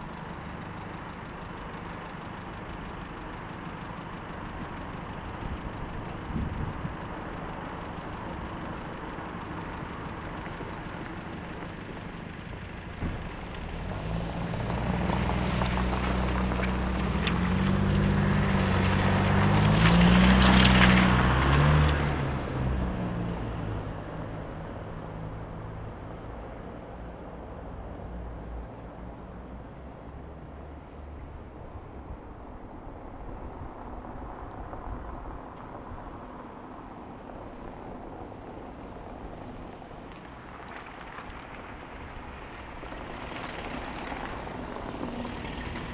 Berlin: Vermessungspunkt Friedel- / Pflügerstraße - Klangvermessung Kreuzkölln ::: 09.12.2012 ::: 05:41
Berlin, Germany, 9 December 2012, ~06:00